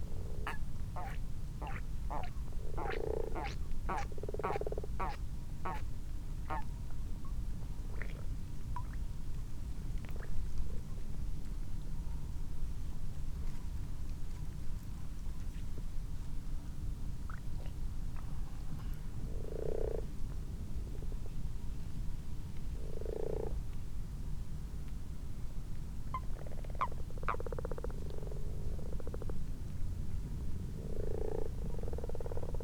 Malton, UK - frogs and toads ...
common frogs and common toads in a garden pond ... xlr sass to zoom h5 ... time edited unattended extended recording ...
Yorkshire and the Humber, England, United Kingdom, 12 March 2022, 20:52